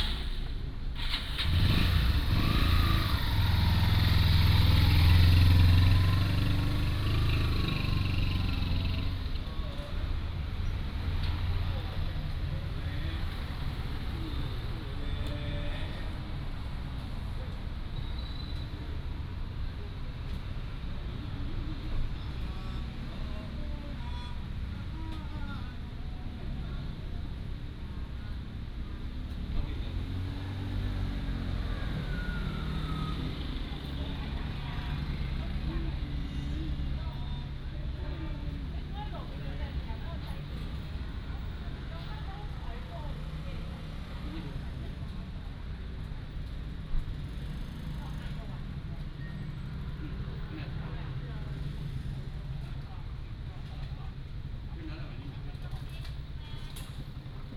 Small bus station, Traffic sound, The old man is singing, Dog sounds